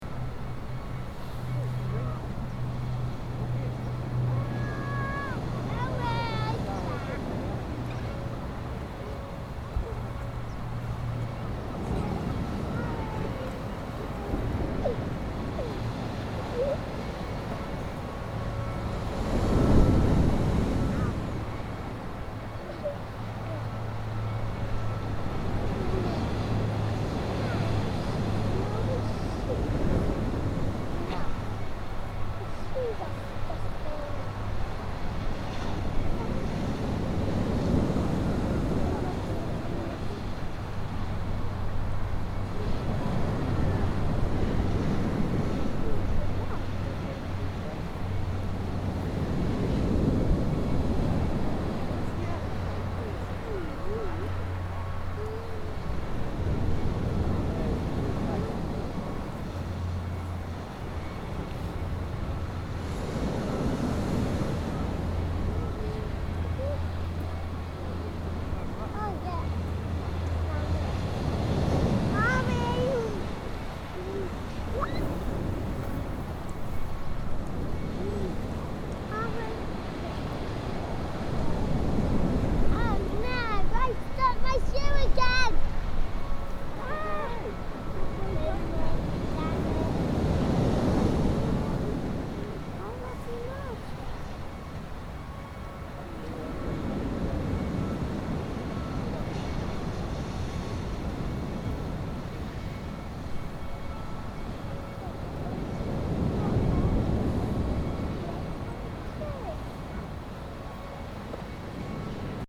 waves at West Bay 2
WLD 2011, very distant sound of church bells. Kid saying my shoe is stuck.